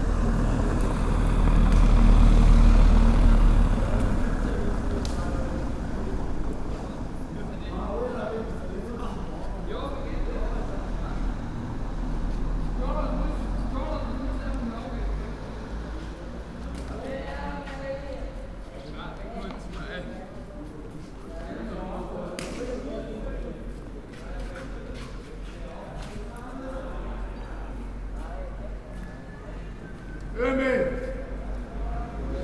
linz taubenmarkt - linz, taubenmarkt bei nacht
linz, taubenmarkt bei nacht